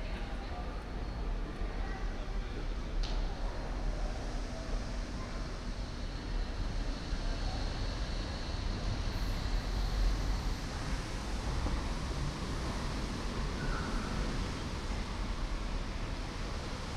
essen, rathaus, subway station
An der Untergrundbahn auf Gleis 4. Bahnen fahren herein, stoppen, Türen öffnen sich, Menschen verassen und besteigen den Zug, die Bahn fährt weiter.
At the subway station, track 4. Trains driving in, stop, doors opening, people exit and enter, train drives further drive further
Projekt - Stadtklang//: Hörorte - topographic field recordings and social ambiences
Essen, Germany, 31 May, 18:33